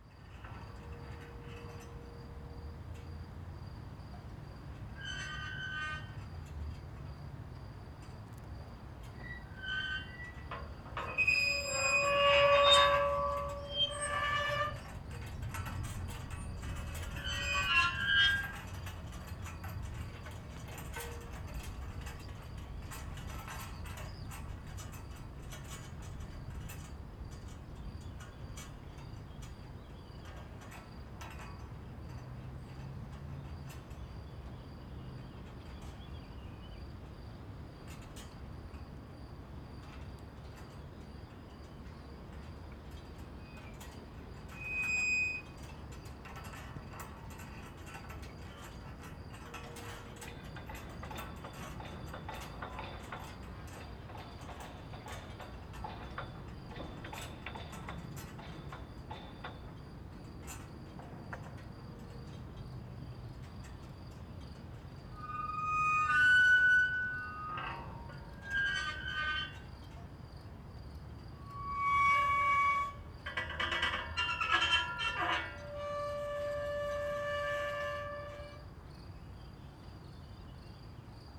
Maribor, Melje - flagpoles and wind
another one, more subtle variations of the rattling and squeeking flagpoles, some construction sound from far away, and more wind.
(tech: SD702, AT BP4025)
Maribor, Slovenia, 27 May, ~10:00